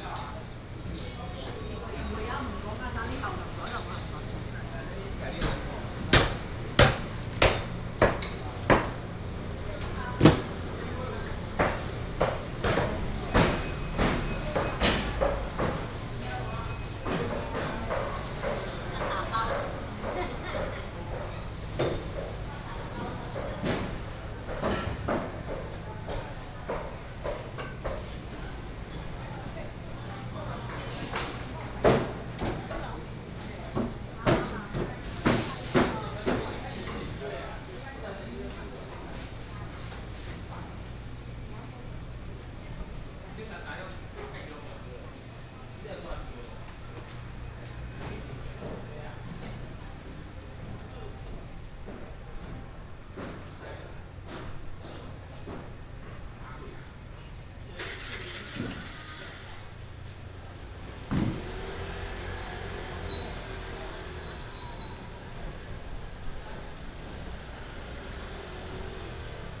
頭份中山市場, Toufen City - Traditional Markets
vendors peddling, Traditional Markets, Binaural recordings, Sony PCM D100+ Soundman OKM II
Toufen City, Miaoli County, Taiwan